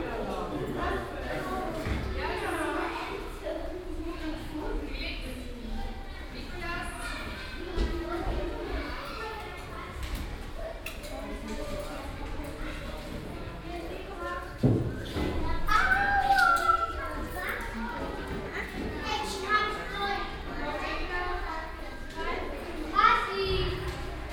refrath, mohnweg, waldorf schule, vor schulbeginn
soundmap: refrath/ nrw
schuleingang, morgens, schritte, stimmen, em sirenen, ball hüpfer, schlüsseklingeln, die schulklingel
project: social ambiences/ listen to the people - in & outdoor nearfield recordings